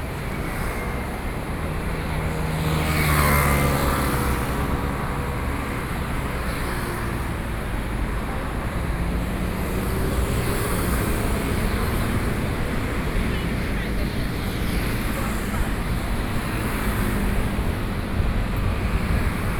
Roosevelt Road, Taipei - Soundwalk
walking out of the MR and the noise street, Sony PCM D50 + Soundman OKM II